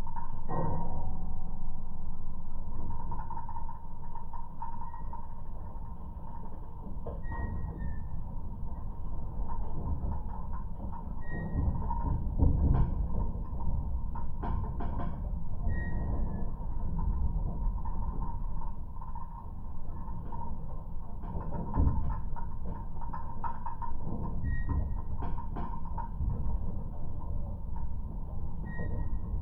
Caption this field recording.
Geophone placed on metallic constructions of old farm building